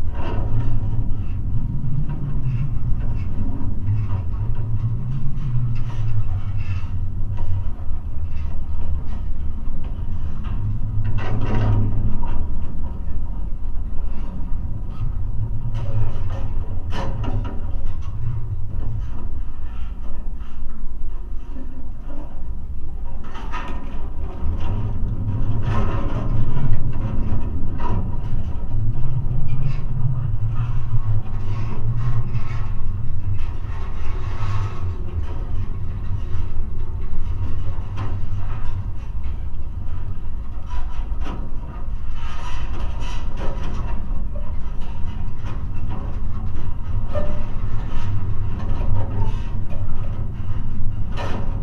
Unnamed Road, Lithuania, single wire
a pair of contact microphones and geopgone on a single fence wire in a field